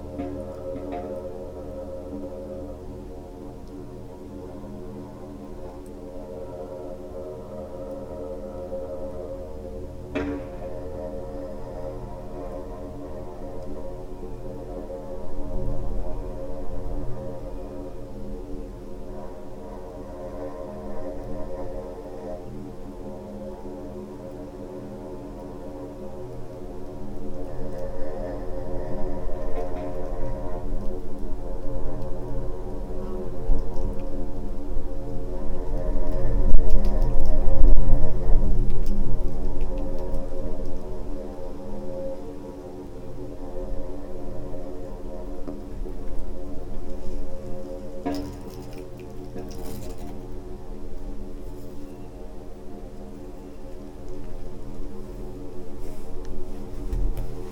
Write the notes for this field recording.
air, wind, sand and tiny stones, broken reflector, leaves, flies, birds, breath and ... voices of a borehole